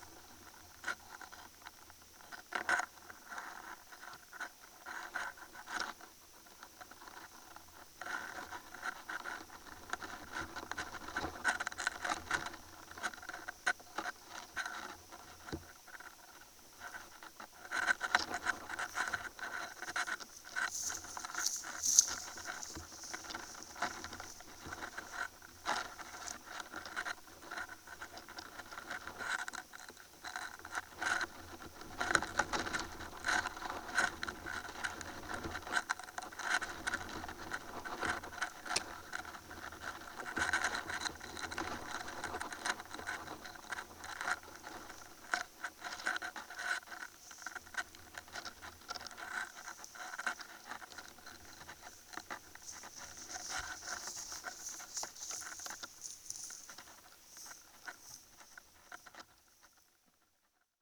road marker made of rod and some cellophane bag...recorded with contact microphone